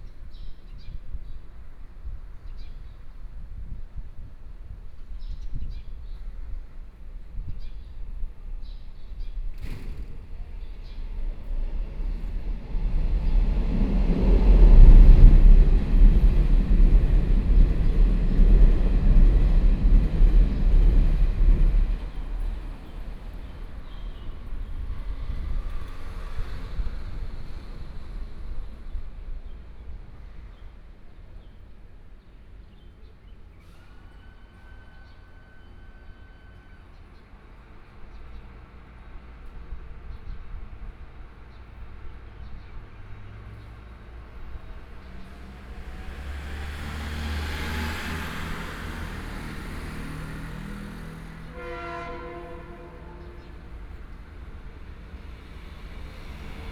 Chengxing Rd., Dongshan Township - Under the railway track
Under the railway track, Traffic Sound, Birdsong sound, Trains traveling through